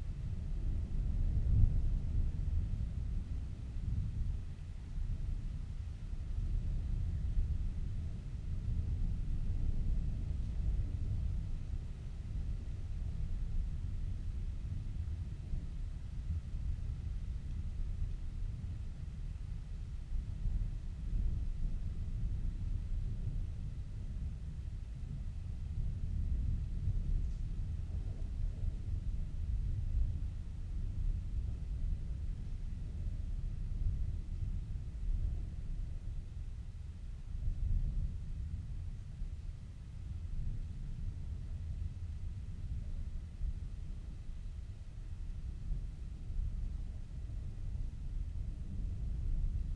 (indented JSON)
{
  "title": "Luttons, UK - inside church ... outside approaching thunderstorm ...",
  "date": "2018-07-26 17:15:00",
  "description": "inside church ... outside approaching thunderstorm ... open lavalier mics on T bar on mini tripod ... background noise ...",
  "latitude": "54.12",
  "longitude": "-0.54",
  "altitude": "85",
  "timezone": "Europe/London"
}